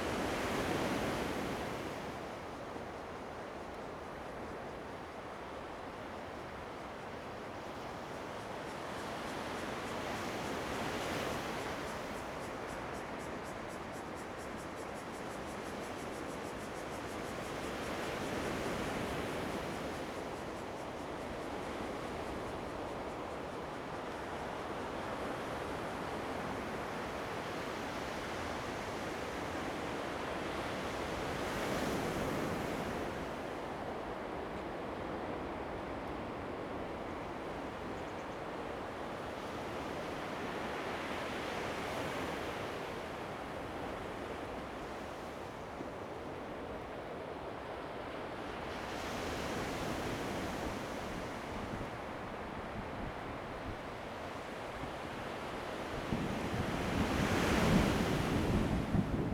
長濱村, Changbin Township - Thunder and the waves
At the seaside, Sound of the waves, Thunder, Very hot weather
Zoom H2n MS+ XY
Changbin Township, Taitung County, Taiwan